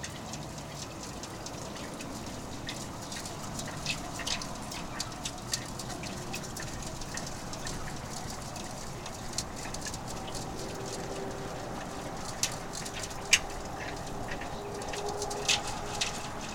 Utena, Lithuania, tiny ice
wind and waves are playing with tiny ice on the lake